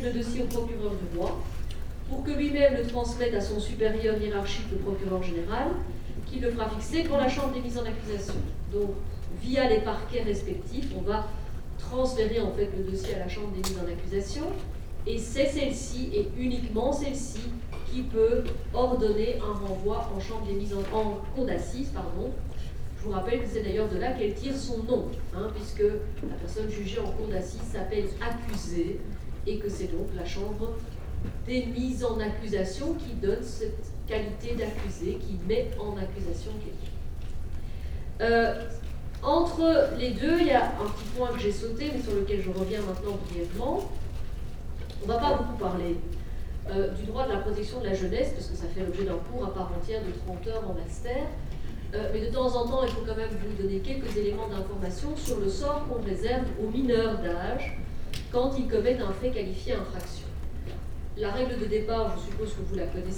Quartier des Bruyères, Ottignies-Louvain-la-Neuve, Belgique - A course of legal matters
In the Montesquieu auditoire, a course of legal matters. Near everybody is sleeping ^^